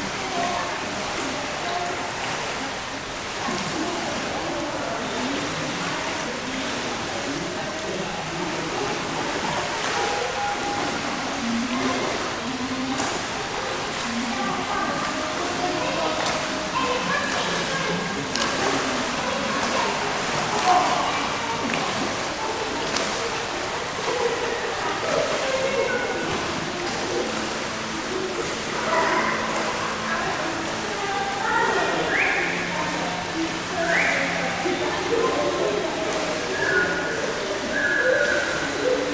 Český Krumlov, Tschechische Republik - Schwimmhalle
Český Krumlov, Tschechische Republik, Schwimmhalle
12 August 2012, 19:45